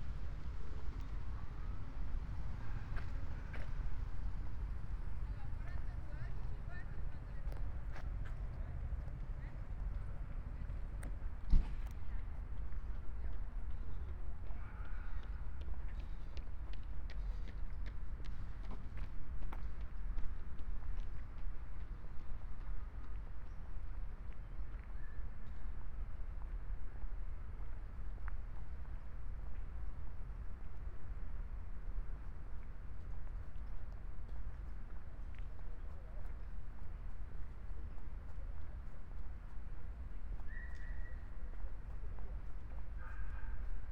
Ascolto il tuo cuore, città. I listen to your heart, city. Chapter CXIII - December afternoon at Valentino park in the time of COVID19: soundwalk
"December afternoon at Valentino park in the time of COVID19": soundwalk
Chapter CXLVIII of Ascolto il tuo cuore, città. I listen to your heart, city
Thursday, December 17th 2020. San Salvario district Turin, to Valentino, walking in the Valentino Park, Turin, about six weeks of new restrictive disposition due to the epidemic of COVID19.
Start at 1:45 p.m. end at 2:36 p.m. duration of recording 50’48”
The entire path is associated with a synchronized GPS track recorded in the (kmz, kml, gpx) files downloadable here:
17 December, ~14:00